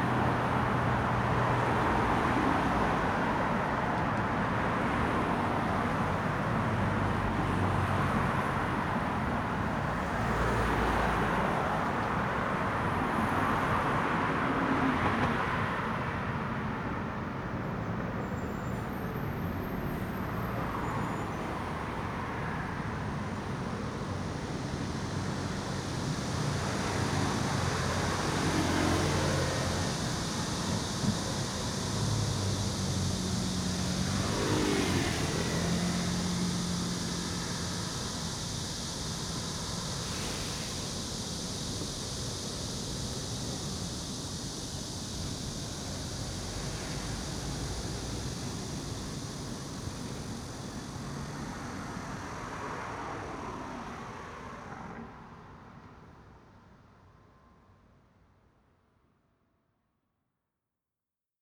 대한민국 서울특별시 서초구 서초대로 389 - Bus stop, Cicada
Bus stop, Cicada
버스정류장, 매미 울음소리
9 August 2019, ~13:00